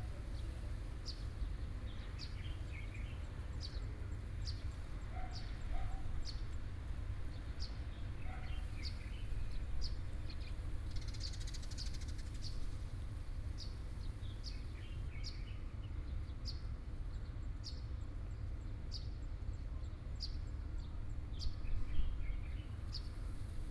慶興廟, Wujie Township - In the temple plaza
In the temple plaza, Hot weather, Traffic Sound, Birdsong, Small village